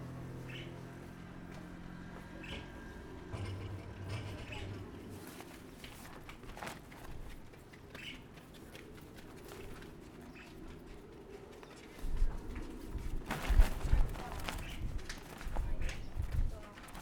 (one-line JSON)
{"title": "芳苑村, Fangyuan Township - the wind", "date": "2014-03-09 08:26:00", "description": "The sound of the wind, On the streets of a small village\nZoom H6 MS", "latitude": "23.93", "longitude": "120.32", "altitude": "5", "timezone": "Asia/Taipei"}